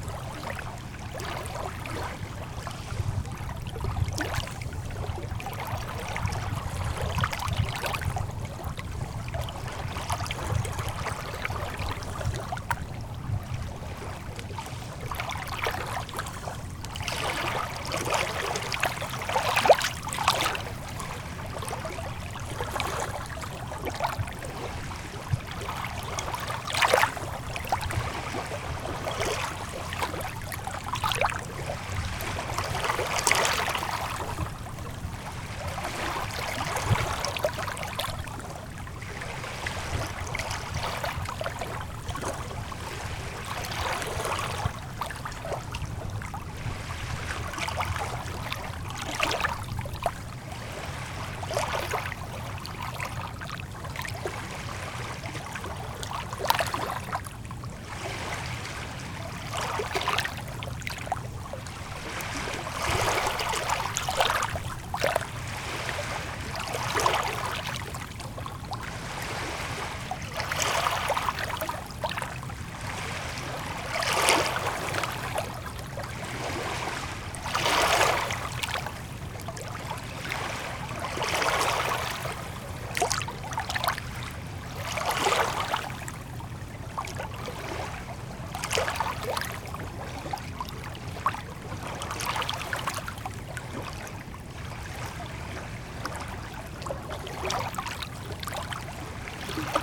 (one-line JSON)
{"title": "Svartsöstaden, Luleå, Sweden - small waves", "date": "2009-08-23 11:49:00", "description": "small waves at the beach in Svartönstaden area i Luleå Sweden. captured with minidisc and a small condenser microphone attached to a stick in the sand. You can hear a boat passing by far away and how the tides rises.", "latitude": "65.56", "longitude": "22.19", "altitude": "18", "timezone": "Europe/Stockholm"}